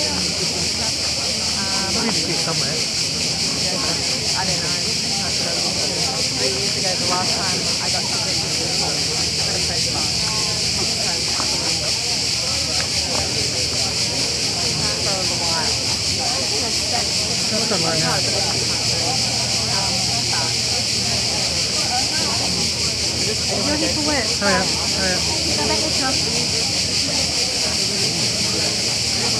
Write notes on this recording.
At the entrance area of the Venice Biennale 2022 - the sound of cicades and the voices of international visitors waitingin line at the tills to receive their tickets. international soundscapes and art enviroments